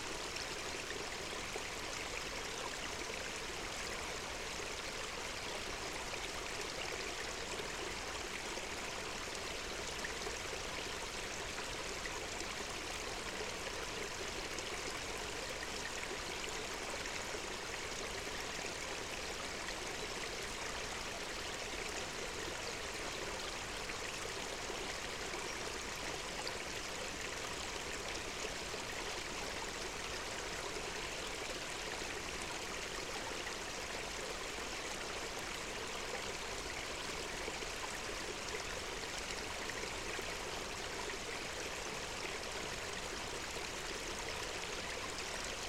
Molavenai, Lithuania, streamlet
streamlet on Molavenai mounds